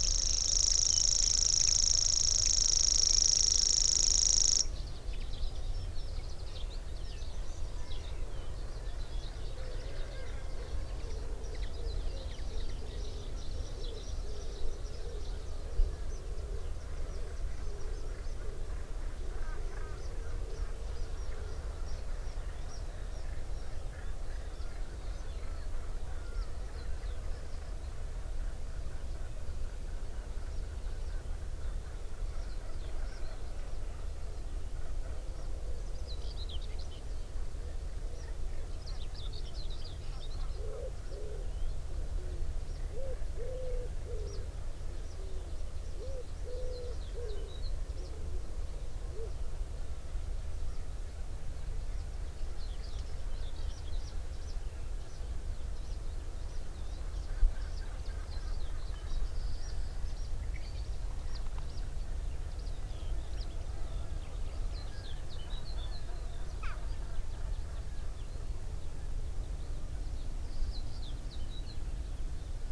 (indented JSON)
{
  "title": "Cliff Ln, Bridlington, UK - grasshopper warbler ... in gannet territory ...",
  "date": "2018-06-27 07:10:00",
  "description": "grasshopper warbler ... in gannet territory ... mics in a SASS ... bird calls ... songs from ... gannet ... kittiwake ... carrion crow ... curlew ... blackcap ... linnet ... whitethroat ... goldfinch ... tree sparrow ... wood pigeon ... herring gull ... some background noise ...",
  "latitude": "54.15",
  "longitude": "-0.17",
  "altitude": "89",
  "timezone": "GMT+1"
}